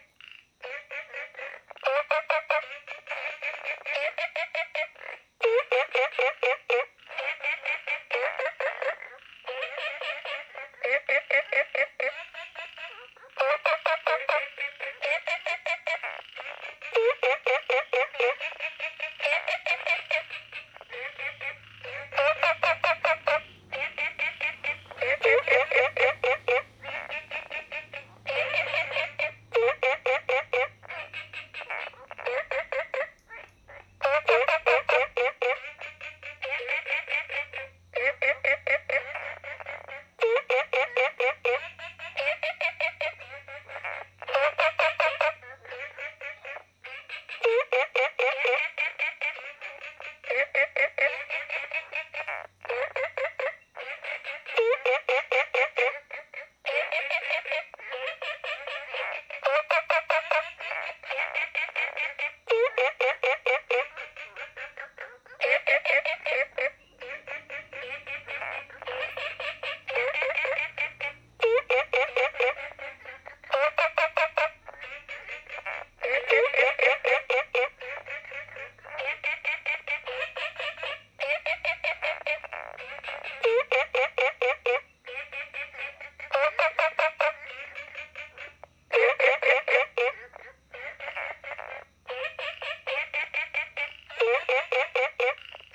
綠屋民宿, 桃米里 Taiwan - Frogs chirping
Frogs chirping, Ecological pool
Zoom H2n MS+XY
Puli Township, Nantou County, Taiwan, 11 June 2015